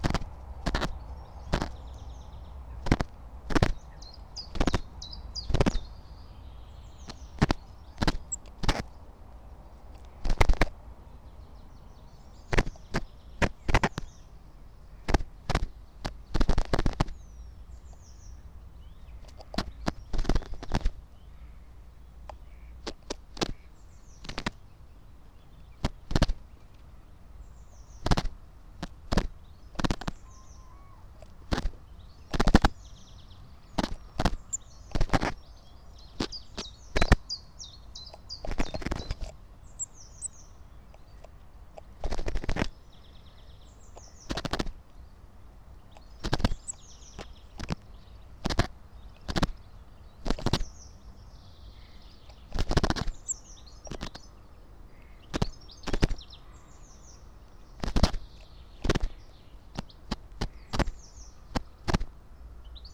09:29 Berlin Buch, Lietzengraben - wetland ambience. Bird pulling fake fur from the microphone's wind protection.
Deutschland, 16 April 2022, 09:29